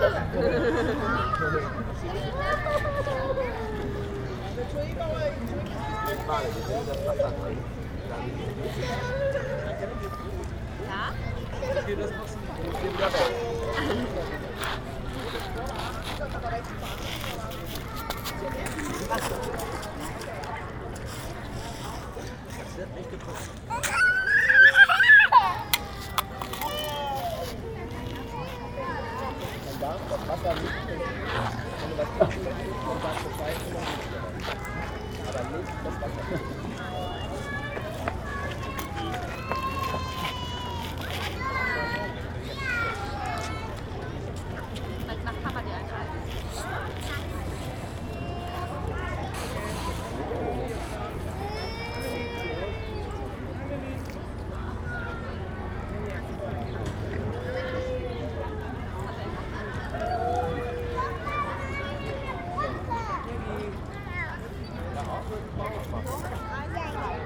Hamburg, Deutschland - Children playing in the park
Grasbrookpark at 12AM. Children playing in a park, with the parents.
Hamburg, Germany, 19 April 2019, 12:00